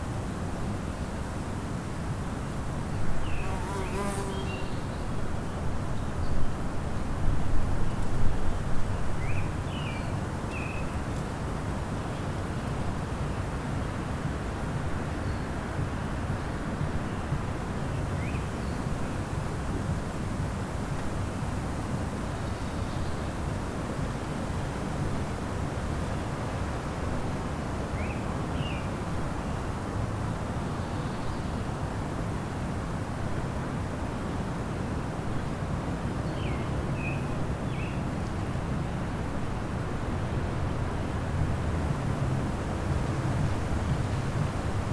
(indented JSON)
{
  "title": "Unnamed Road, Recoleta, Región Metropolitana, Chili - Cementerio General",
  "date": "2018-01-01 16:11:00",
  "description": "First day of the year. Too hot. Looking for some shadow to record.",
  "latitude": "-33.42",
  "longitude": "-70.65",
  "altitude": "556",
  "timezone": "America/Santiago"
}